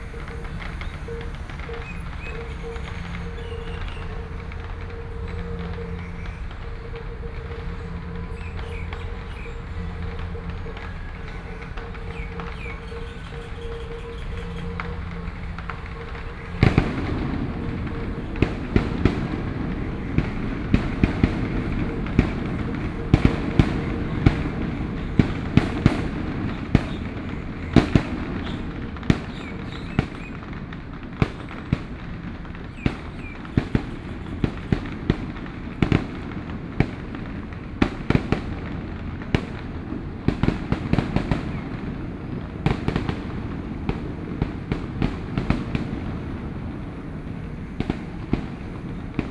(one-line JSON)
{"title": "左營區菜公里, Kaohsiung City - in the Park", "date": "2014-06-15 18:33:00", "description": "in the Park, Birdsong, Traditional temple festivals, Fireworks sound, Traffic Sound\nSony PCM D50+ Soundman OKM II", "latitude": "22.67", "longitude": "120.31", "altitude": "9", "timezone": "Asia/Taipei"}